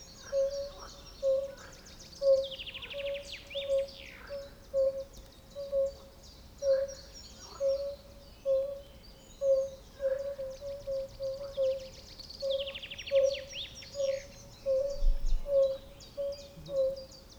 Wetland in Knížecí Les, Nosislav, Czechia - Fire-bellied Toad and motor plane
A beautiful place in floodplain forest in region Židlochovicko. This wetland is habitat of rana arvalis, bombina bombina during the spring time.
Jihovýchod, Česko, 22 April 2018